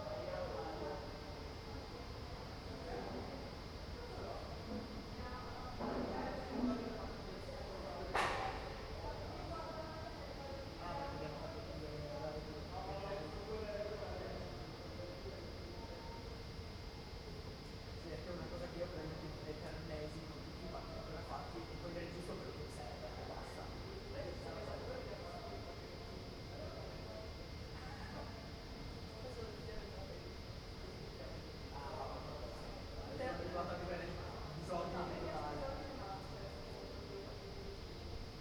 Ascolto il tuo cuore, città, I listen to your heart, city. Several chapters **SCROLL DOWN FOR ALL RECORDINGS** - Evening, first day of students college re-opening in the time of COVID19 Soundscape
"Evening, first day of students college re-opening in the time of COVID19" Soundscape
Chapter CXXII of Ascolto il tuo cuore, città. I listen to your heart, city
Tuesday, September 1st, 2020, five months and twenty-one days after the first soundwalk (March 10th) during the night of closure by the law of all the public places due to the epidemic of COVID19.
Start at 10:36 p.m. end at 11:29 p.m. duration of recording 52’51”
The student's college (Collegio Universitario Renato Einaudi) opens on this day after summer vacation.